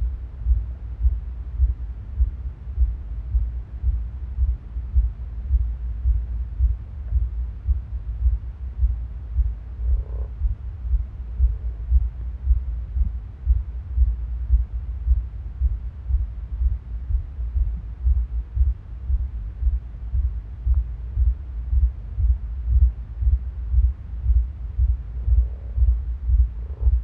Pergola, Malvern, UK - Malvern, Worcestershire, UK
From an overnight recording using a very inexpensive contact microphone secured under the roof of my garden pergola. Directly above is a hen mallard incubating eggs. Very luckily the mic must be under her body registering the pulse. The planking is 10mm thick. Notice how quicly her heart changes pace. Strangely other sounds are picked up too. Possibly the wooden roof is acting as a diaphragm as well as a conductor. You may have to increase the volume to hear this recording well. I am hoping to record the eggs hatching later around 17th April.
England, United Kingdom, 1 April 2021